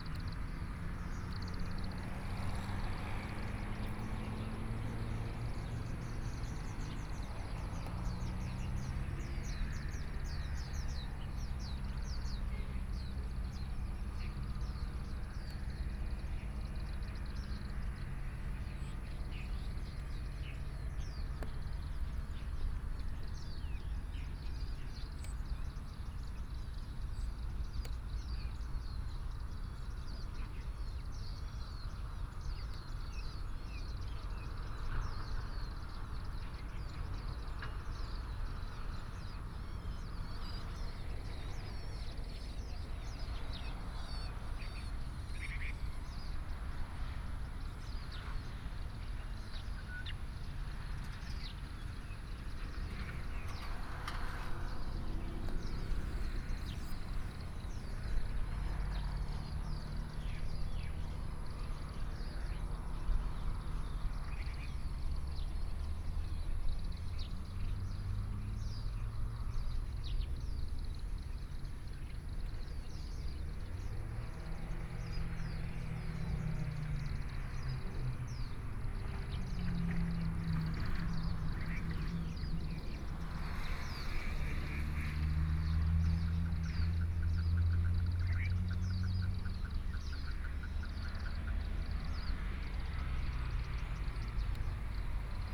五結鄉錦眾村, Yilan County - In beware
In beware, Town, Traffic Sound, Birdsong
Sony PCM D50+ Soundman OKM II